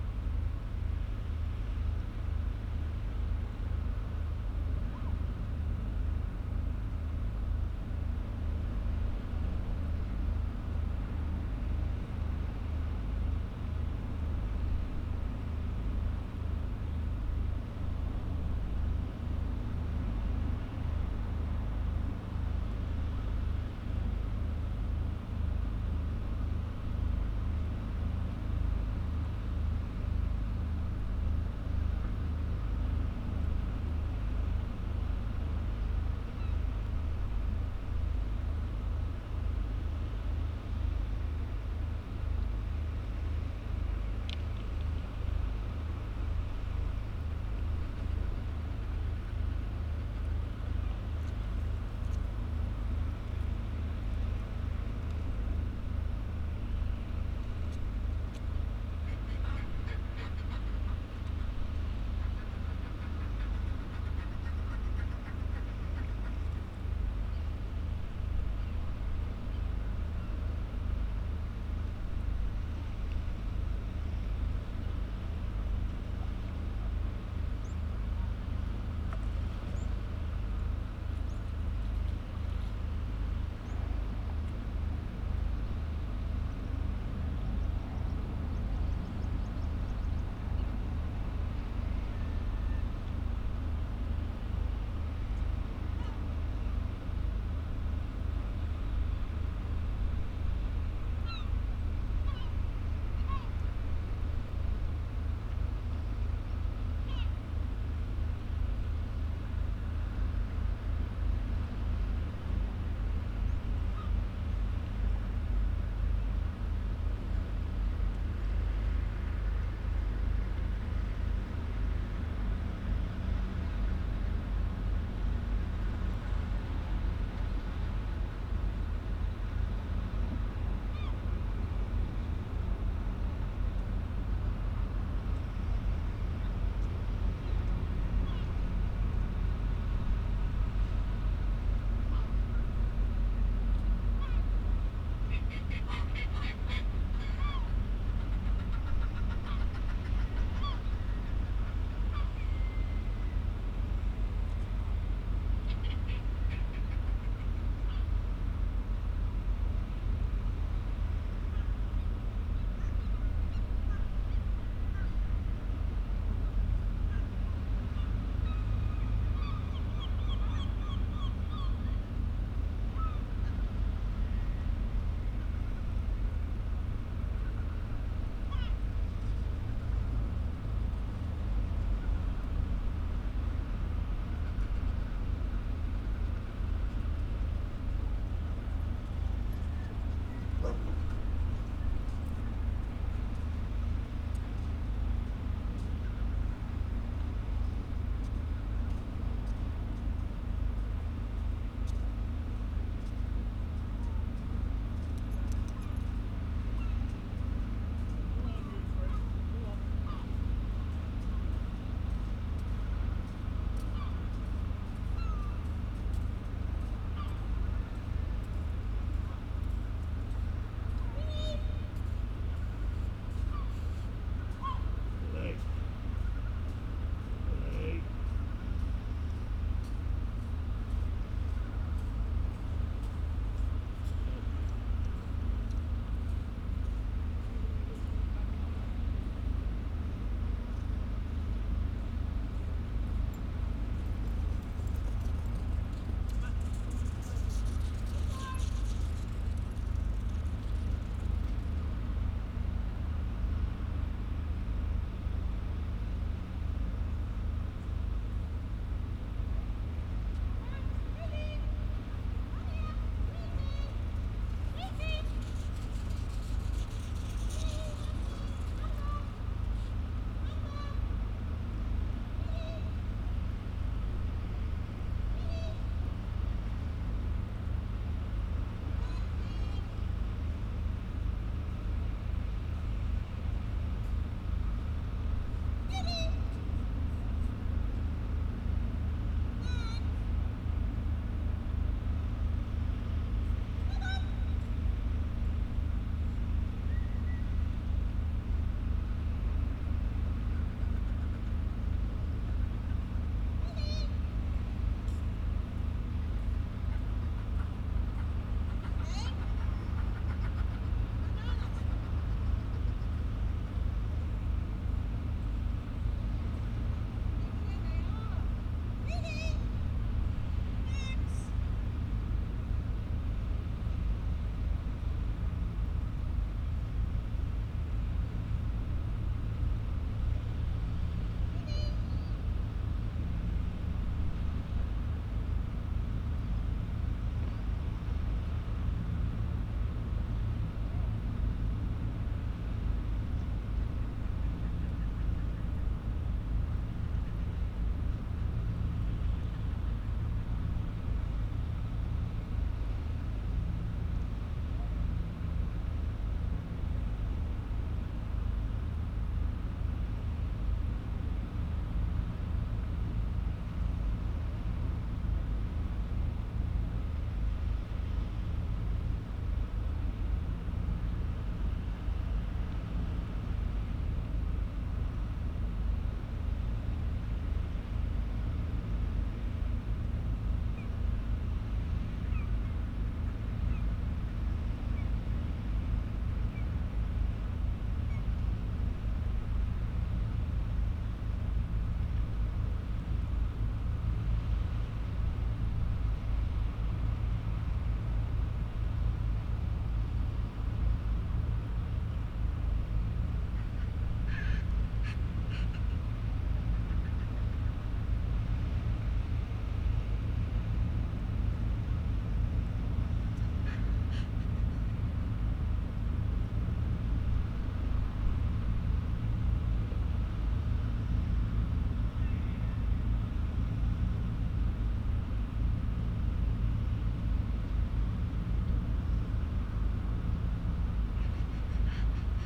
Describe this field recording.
under the east cliff ... incoming tide ... lavalier mics clipped to bag ... bird calls from ... rock pipit ... sand martin ... fulmar ... herring gull ... lesser-black backed gull ... all sorts of background noise ...